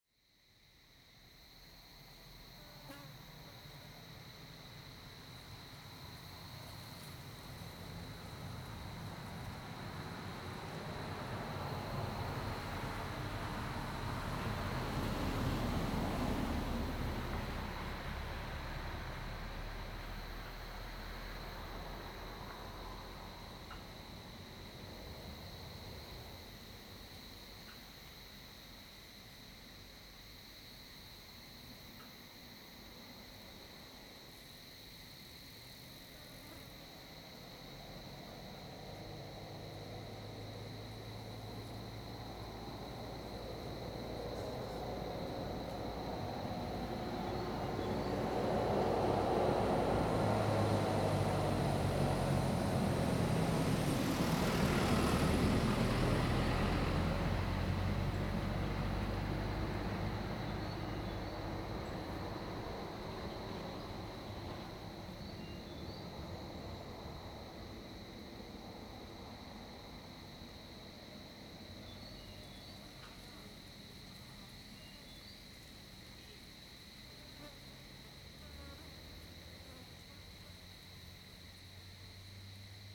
Xuhai Rd., Mudan Township, 屏東縣 - Mountain corners
Mountain corners, Mountain corners, Cicadidae sound, Fly sound, Bird song, Bicycle team, squirrel, traffic sound, wind
Zoom H2n MS+XY
Pingtung County, Taiwan, 2 April